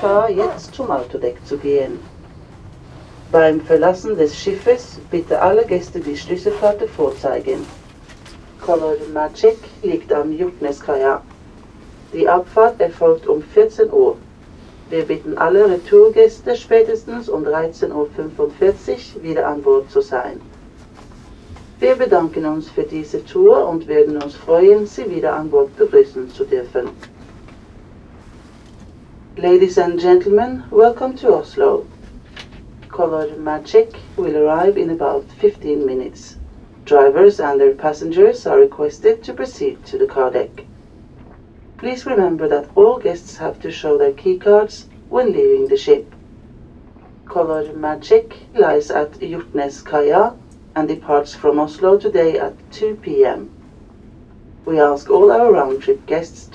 Asker, Norwegen - Ferry to Oslo - announcement on board
On the ferry from Kiel to Oslo. The sound of the arrival announcement on board in german and english language.
international sound scapes - topographic field recordings and social ambiences